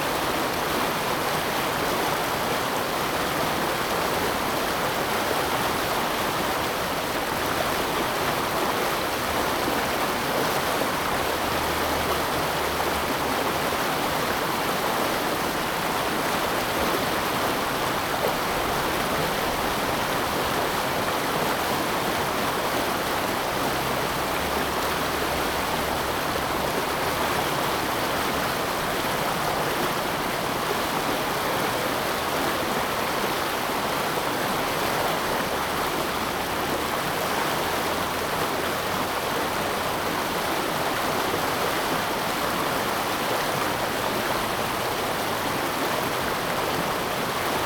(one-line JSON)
{"title": "柳川, West Dist., Taichung City - Flow sound", "date": "2016-09-06 14:55:00", "description": "The sound of the river, Flow sound\nZoom H2n MS+XY", "latitude": "24.14", "longitude": "120.67", "altitude": "77", "timezone": "Asia/Taipei"}